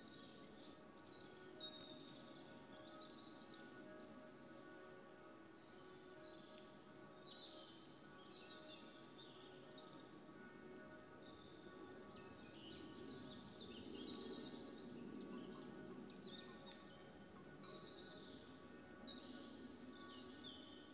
Tankwa Town, Northern Cape, South Africa - The Union Burn
An ambisonic-binaural downmix from a Fire Ranger's perspective; the Burning of the art piece The Union, at Afrikaburn 2019